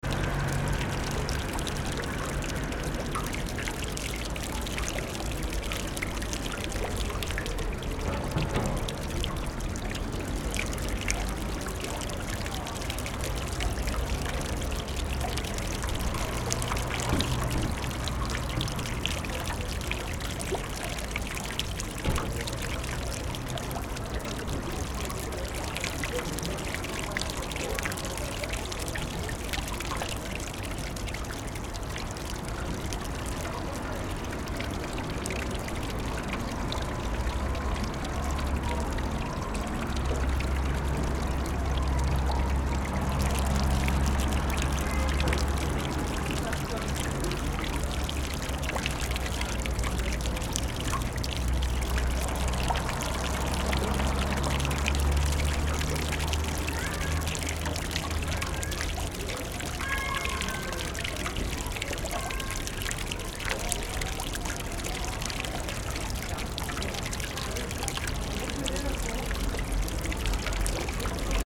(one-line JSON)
{"title": "Fountain, Nova Gorica, Slovenia - Fountain near the municipality building", "date": "2017-06-06 16:30:00", "description": "The sound of the water from the fountain near the municipality building in Nova Gorica.", "latitude": "45.96", "longitude": "13.65", "altitude": "99", "timezone": "GMT+1"}